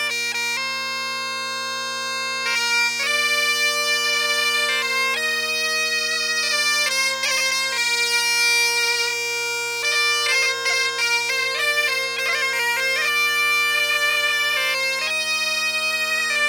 stade du moustoir - Duo
Traditional britton music.
France métropolitaine, France, 13 June 2020, 16:00